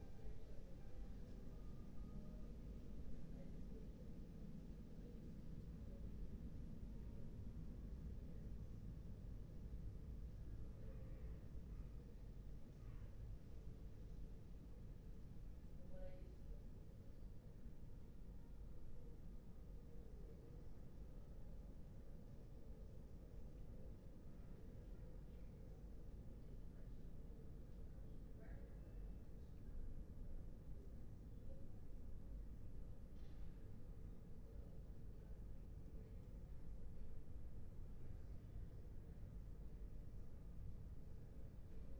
Berlin Bürknerstr., backyard window - Hinterhof / backyard ambience, quiet late summer night, voices, music
22:01 Berlin Bürknerstr., backyard window - Hinterhof / backyard ambience